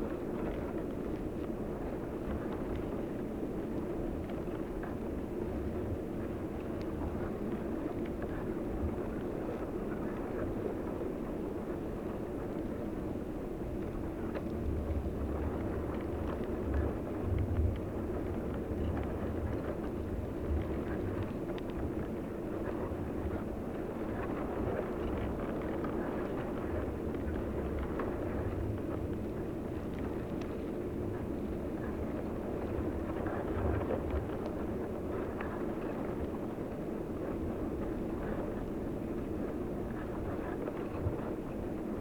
Jūrmala, Latvia, in the sand
hydrophones buried in the dunes' sand, near the roots of the grass
22 August